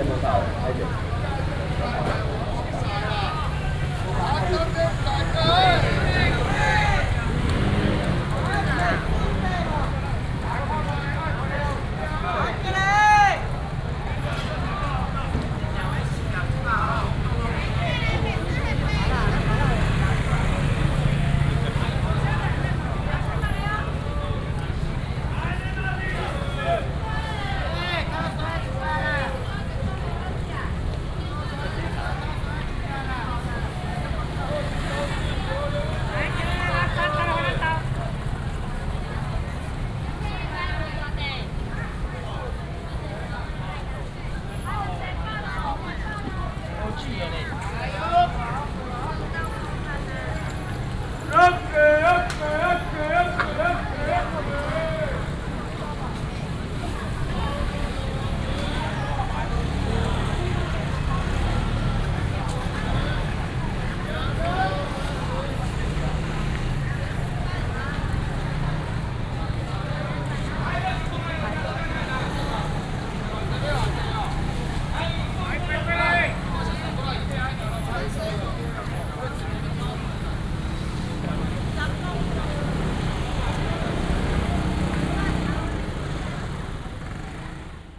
Chongqing Market, Banqiao Dist. - Traditional Taiwanese Markets
Traditional Taiwanese Markets, vendors peddling, traffic sound
Banqiao District, 重慶路290巷38號, 30 April 2017